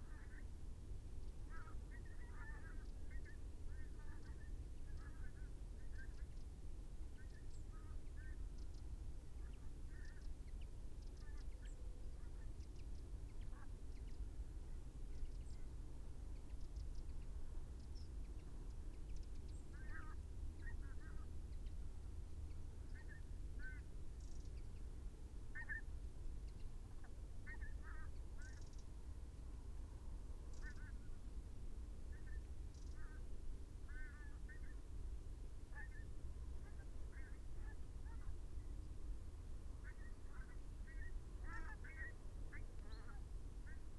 pink-footed geese ... parabolic ... birds flying north-west ... whiffle turn right ... lose height rapidly ... immediately return to level flight ... continue overhead and heading north-west ... wing beats can be heard ... bird calls from ... wren ... pied wagtail ... crow ... dunnock ... chaffinch ... blackbird ... pheasant ... yellowhammer ...